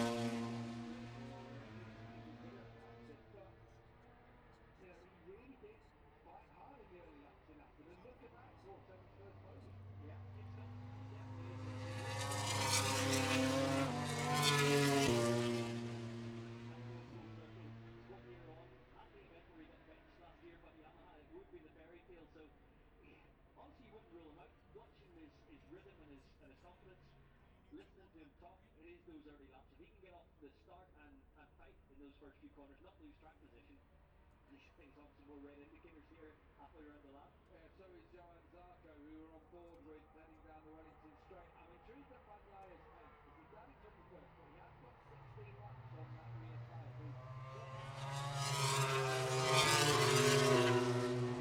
Silverstone Circuit, Towcester, UK - british motorcycle grand prix 2022 ... moto grand prix ......
british motorcycle grand prix 2022 ... moto grand prix free practice four ... outside of copse ... dpa 4060s clipped to bag to zoom h5 ...